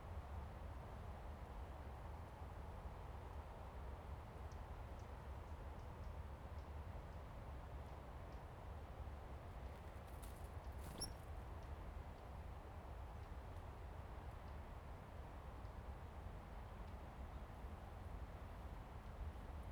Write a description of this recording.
Birds singing, In the woods, Wind and waves, Zoom H2n MS +XY